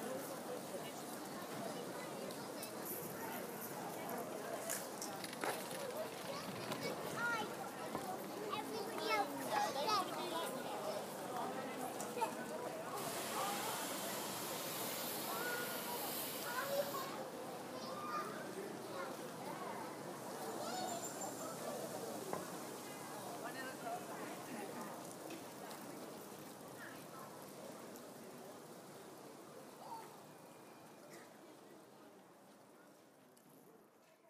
Oxford, Oxfordshire, Reino Unido - Bonn Square
Bonn Square is a dynamic public space located in the centre of Oxford